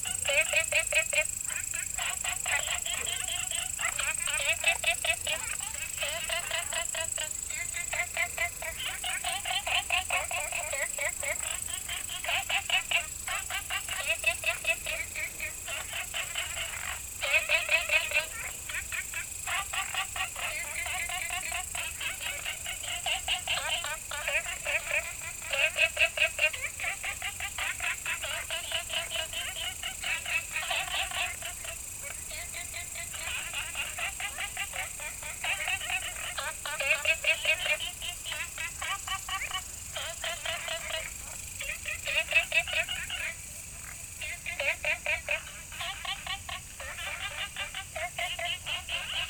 青蛙阿婆家, Taomi Ln., Puli Township - Frogs and Insects called
Frogs chirping, Insects called, Small ecological pool
Nantou County, Puli Township, 桃米巷11-3號, 3 September 2015